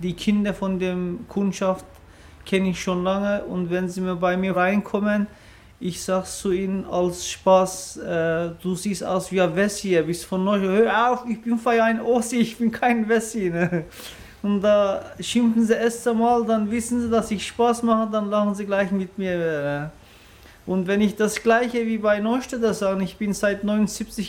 neustadt bei coburg - gewerbegebiet
Produktion: Deutschlandradio Kultur/Norddeutscher Rundfunk 2009
18 August 2009, ~17:00